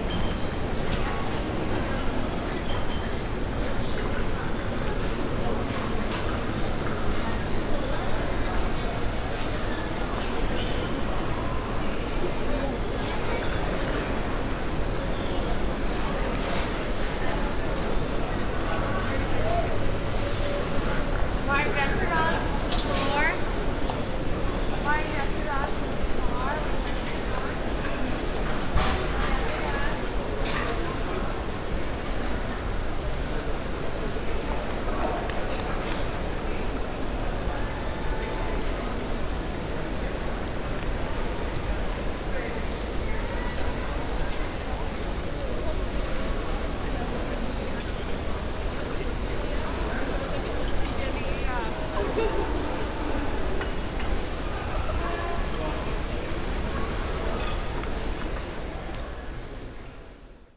dubai, airport, duty free zone
walking inside the airports duty free zone - beeps of the cash machines, people passing by and talking in different languages, an anouncement
international soundmap - social ambiences and topographic field recordings